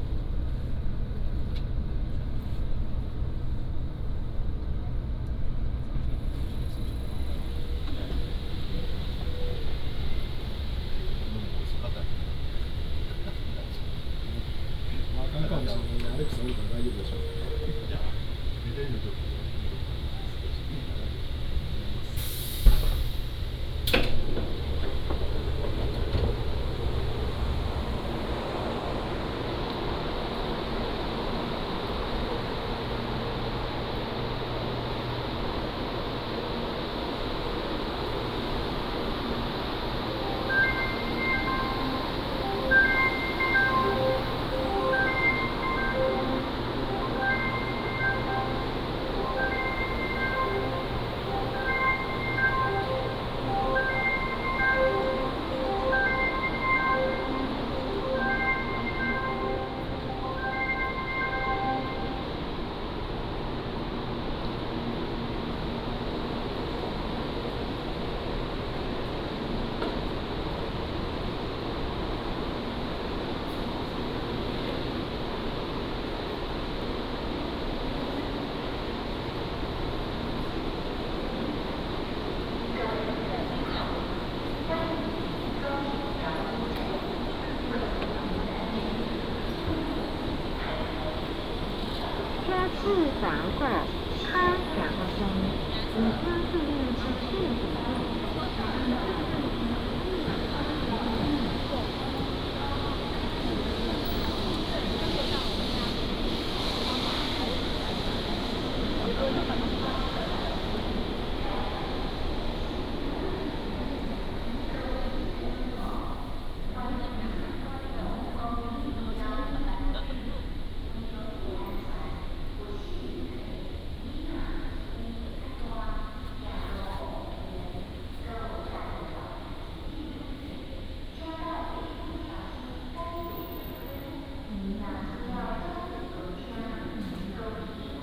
THSR Miaoli Station, Houlong Township - Walk at the station
Walk at the station, From the station to the hall
16 February 2017, 07:58